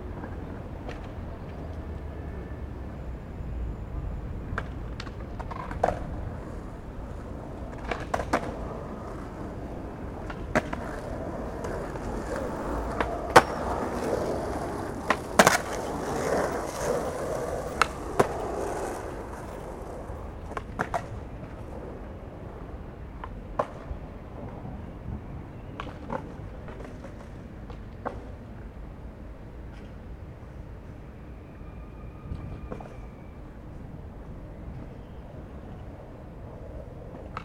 A skater is doing his stuff and a biker pass nearby.